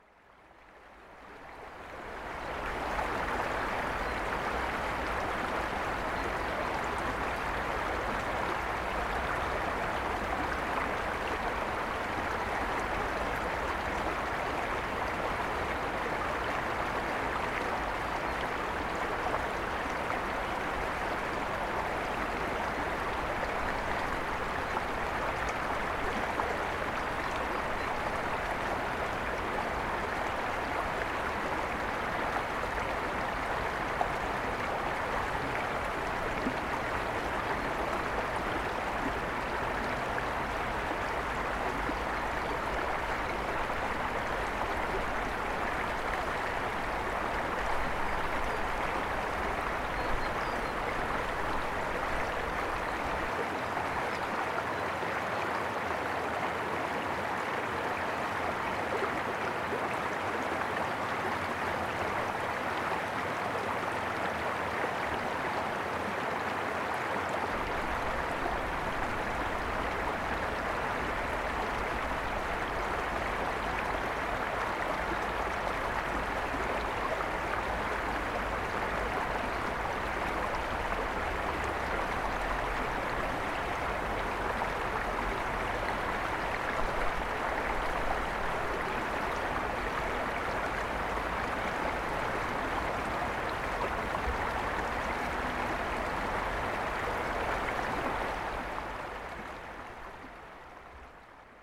Chem. des Primevères, Aix-les-Bains, France - Bords du Sierroz
Les glouglous du Sierroz renaissance après la sécheresse.
France métropolitaine, France, 16 September 2022